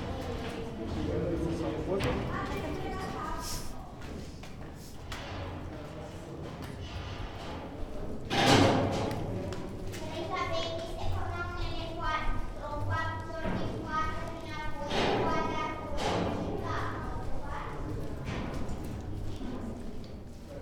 Dambovicioara Cave, Romania
Visit to the Dambovicioara Cave, led by a 14 yr old girl.